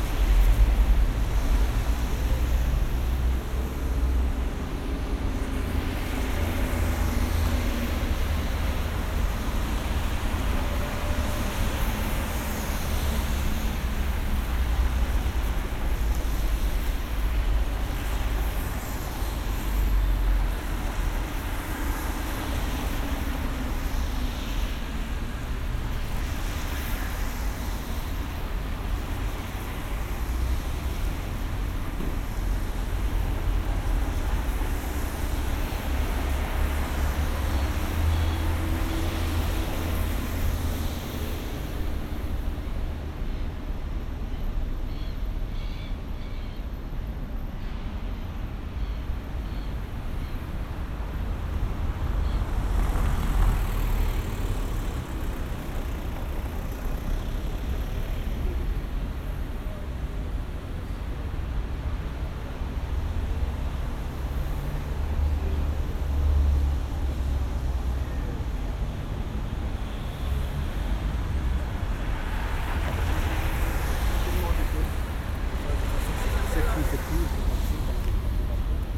Saint-Gilles, Belgium - Depot King
At the entrance of a depot for trams. Koningslaan.
Binaural.